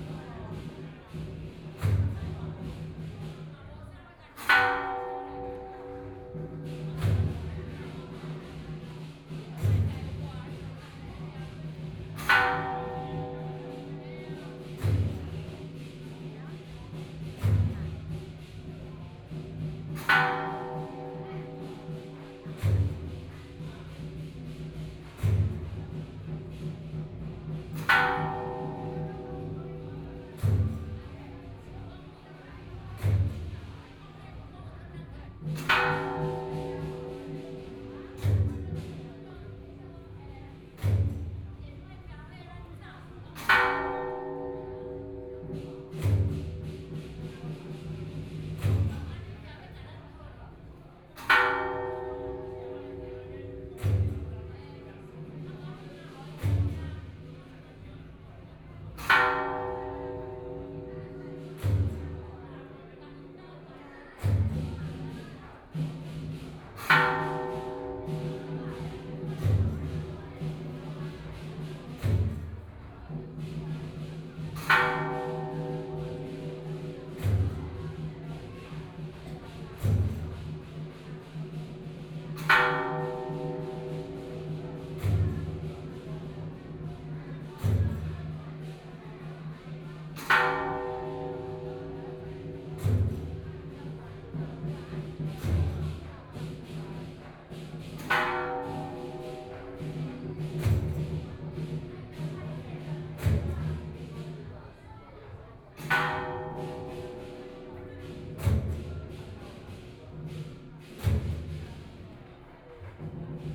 Inside the temple drums and bells, Traditional Festivals, Mazu (goddess), Binaural recordings, Zoom H6+ Soundman OKM II